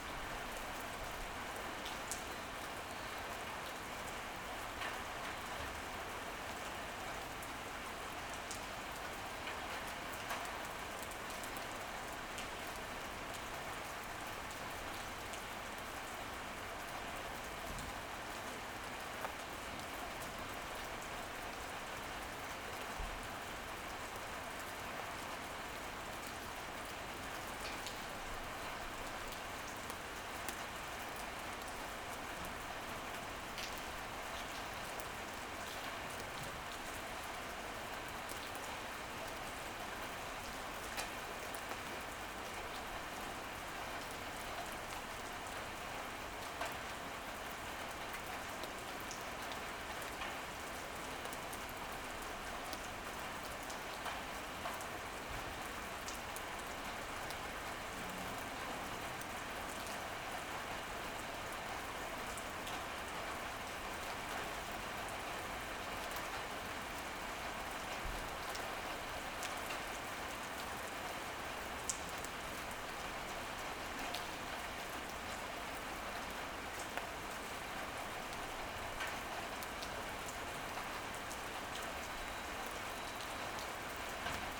Ascolto il tuo cuore, città. I listen to your heart, city. Several chapters **SCROLL DOWN FOR ALL RECORDINGS** - Its one oclock with rain in the time of COVID19 Soundscape
"It's one o'clock with rain in the time of COVID19" Soundscape
Chapter LXXIII of Ascolto il tuo cuore, città. I listen to your heart, city.
Monday May 11th 2020. Fixed position on an internal (East) terrace at San Salvario district Turin, sixty two days after (but eoight day of Phase II) emergency disposition due to the epidemic of COVID19.
Start at 1:14 a.m. end at 1:32 a.m. duration of recording 18’:15”